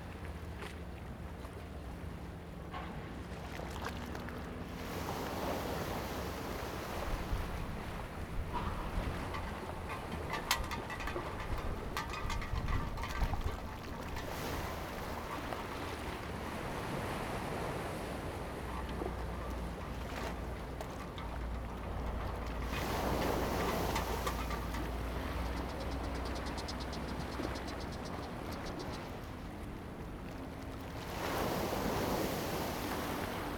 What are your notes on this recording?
Small port, Traffic Sound, Sound tide, Zoom H2n MS +XY